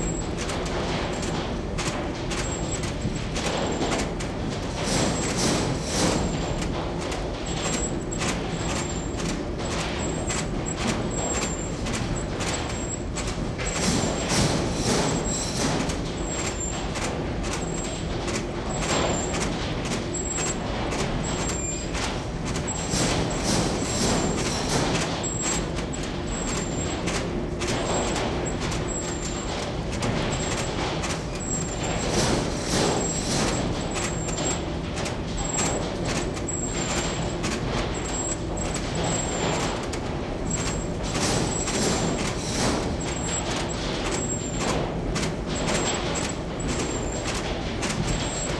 Punta Arenas, Región de Magallanes y de la Antártica Chilena, Chile - storm log - seaweed drying process 02
Seaweed drying process, wind = thunderstorm
"The Natural History Museum of Río Seco is located 13.5 km north (av. Juan Williams) of the city of Punta Arenas, in the rural sector of Río Seco, within the facilities of Algina SA; a seaweed drying Company, which have kindly authorized the use of several of their spaces for cultural purposes, as long as they do not interfere with the output of the Company. These facilities were built largely between 1903 and 1905, by the The South America Export Syndicate Lta. firm."